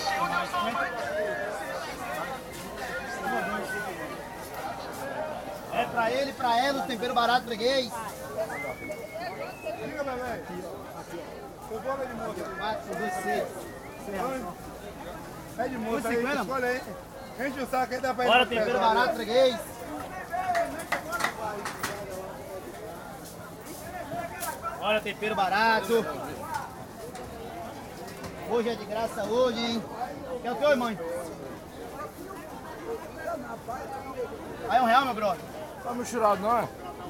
R. Act, Cachoeira - BA, Brasil - Feira, Vendedor de tempero - Market Place, green seasoning salesman
Feira, sábado de manhã, um feirante vende tempero verde.
Market Place, Saturay morning, a salesman sells green seasoning.
Cachoeira - BA, Brazil, 27 January